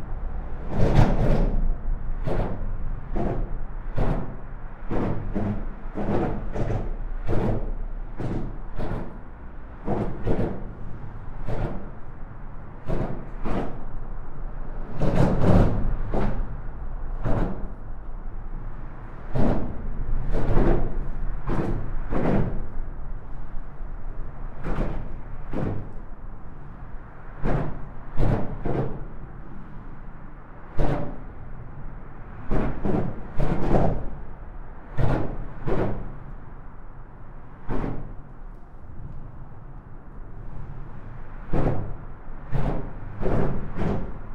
Criquebeuf-sur-Seine, France - Highway bridge
An highway bridge is making horrible noises with the above trafic of cars and lorries.